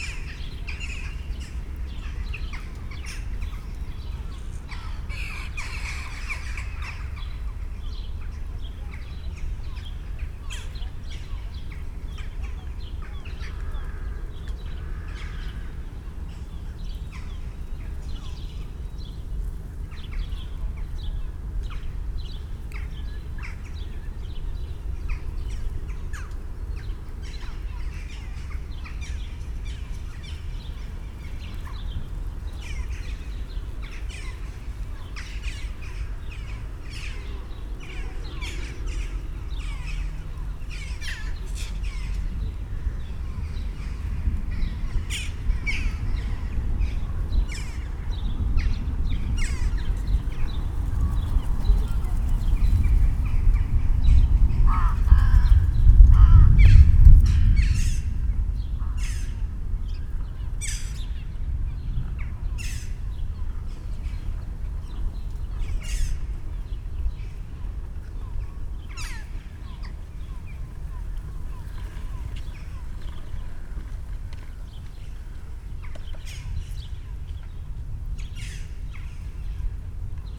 Tallinn, Oismae - inner circle
tallinn, oismae, housing area, ambience, birds, approaching thunderstorm
Tallinn, Estonia, 9 July 2011